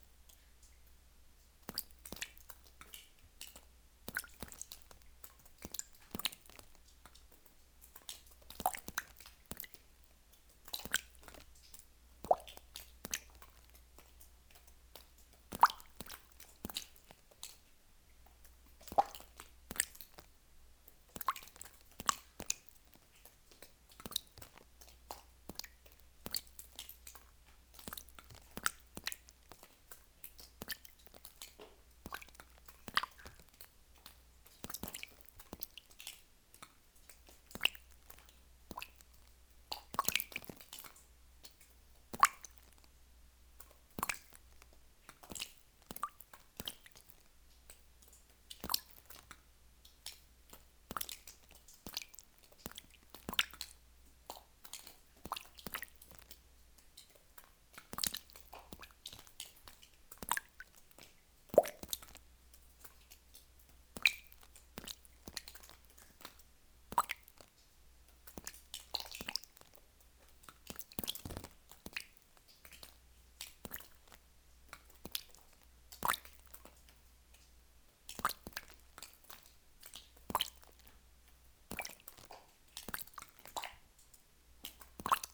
Flumet, France - Underground slate quarry
A simple sound of drops into an underground slate quarry, with a small sizzle sound when water reflux into calcite concretion.